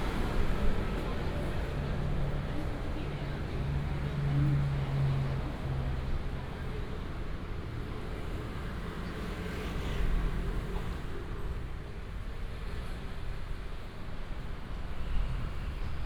Bird sound, Traffic sound, Small market, Under the bridge